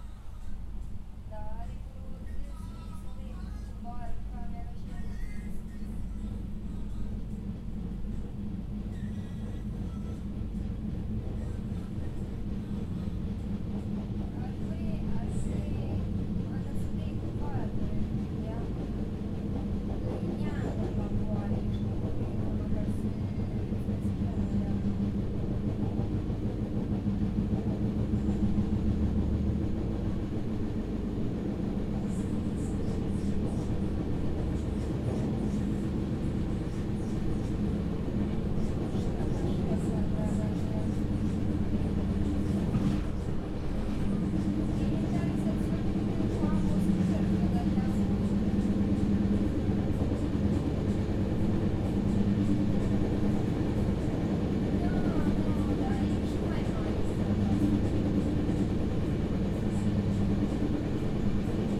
Fiumicino Airport train station - train onboard
getting to Rome on a crowded train someone is thinking he has the coolest music ever...
Fiumicino Rome, Italy, 3 November 2010